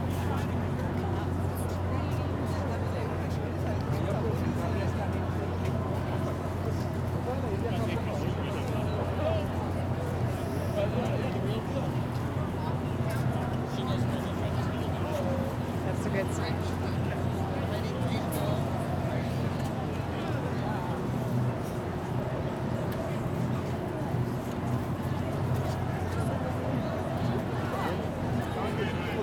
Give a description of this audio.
Sounds from the protest "March for our Lives" in New York City. Zoom H6